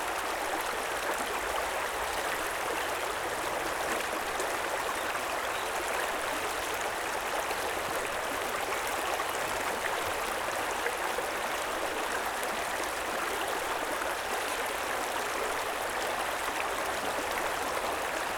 Merri Creek, Northcote, Victoria - Running creek and train tracks
This is recorded on the bank of the small Merri Creek, running through Northcote. Clear sunny spring day, there is a moment where the train squeeks around the bend, travelling slowly between stops.
Recorded using Zoom H4n, standard stereo mics.